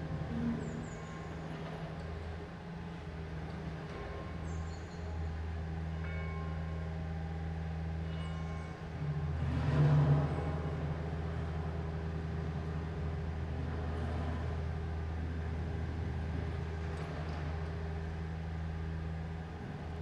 Rue de Laeken, Brussel, Belgium - Deconstruction site and bells
Chantier, cloches à midi.
Tech Note : Sony PCM-D100 wide position from a window at the 2nd floor.